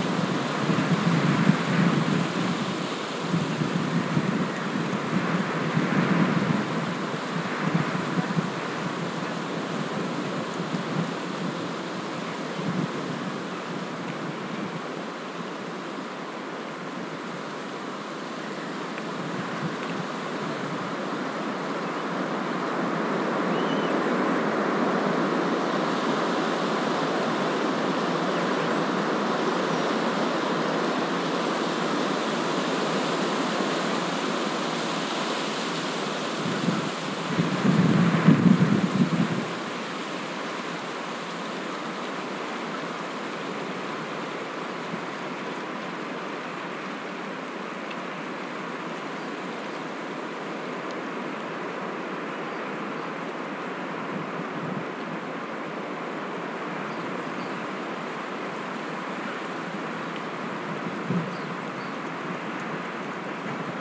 Recording of a spa park atmosphere on a windy day with clearly hearable tree branches squeaking.
Quality isn't best due to the weather conditions...
Recorded with an Olympus LS-P4.
województwo dolnośląskie, Polska, February 2022